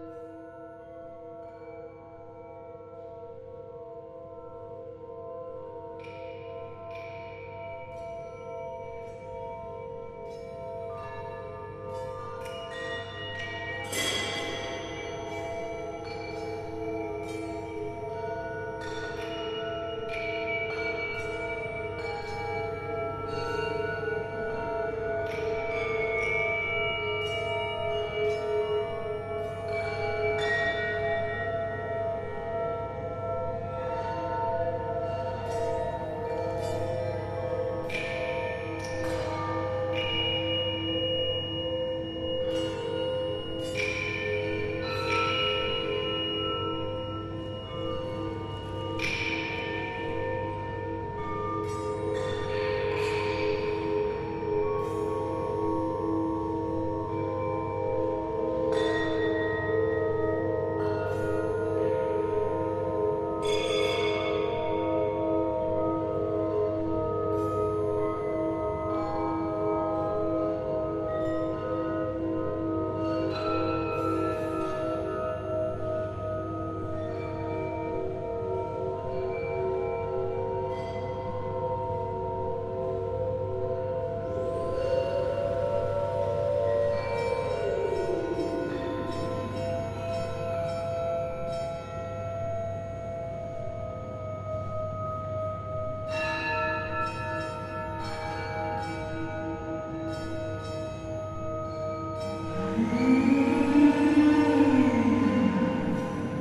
Museun of Music, sound performance
A fragment of the recordings of a sound performance for 15 lydes, 7 pitchforks and two vacuumcleaners, performed in the hall of Museum of Czech Music in Karmelitská and continuing as a marching band between the Museum and the building of Academy of Performing Arts on Malostranské náměstí. The event was part of the program of a exhibition Orbis Pictus. The Lyde is designed by Dan Senn. the group of music amateurs gathered just before the performance and we played the instruments standing on two floors of the ambits of the main hall of the space a former church. The acoustics provided for the improvised music a resonant environment.